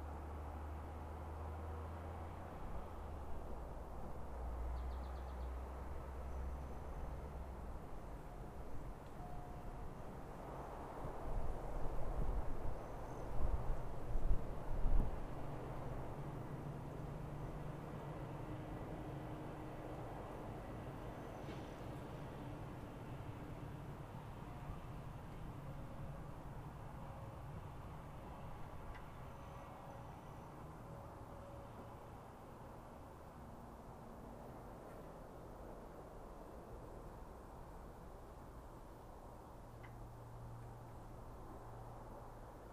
later that same day... chinqi listens closely again
NM, USA